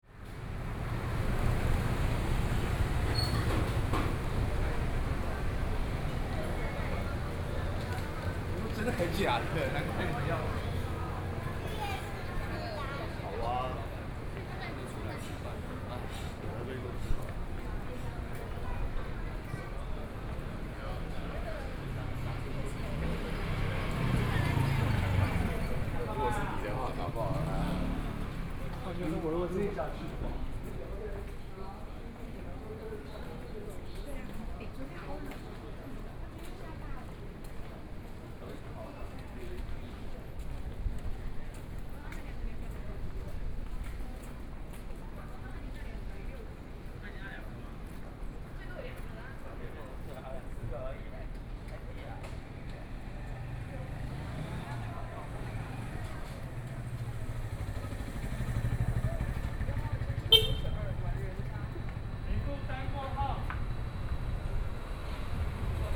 台北市中山區, Taiwan - Small roadway
Traffic Sound, Noon break a lot of people walking in the road ready meal, Walking in the streets, Various shops sound
Taipei City, Taiwan, 2 May